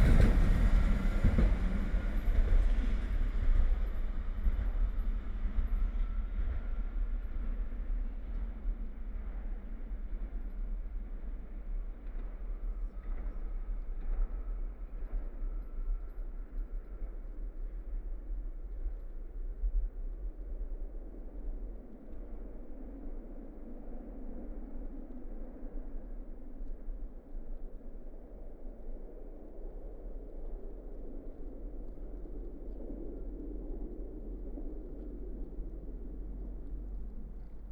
Tatranská Lomnica, Vysoké Tatry, Slovakia - Train in High Tatras (winter)
Narrow gauge electric train in snowy High Tatras (Stadler/ŽOS Vrútky) near road crossing in Tatranská Lomnica.
Slovensko, 21 January